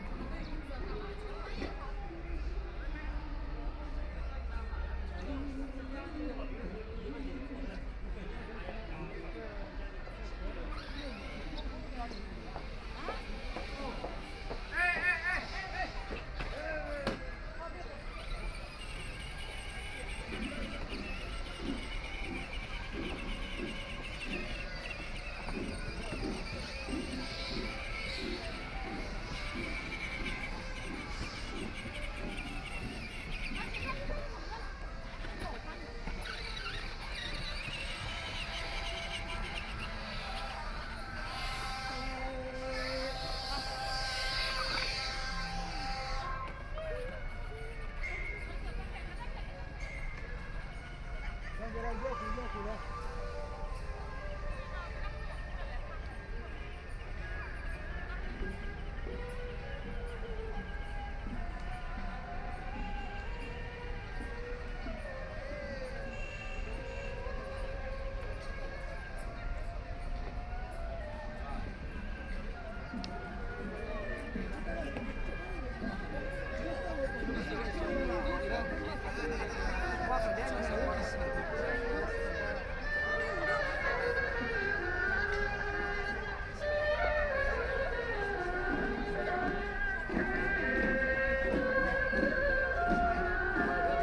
Heping Park, Shanghai - walking in the park
Various performances in the park, shǒu gǔ, Binaural recording, Zoom H6+ Soundman OKM II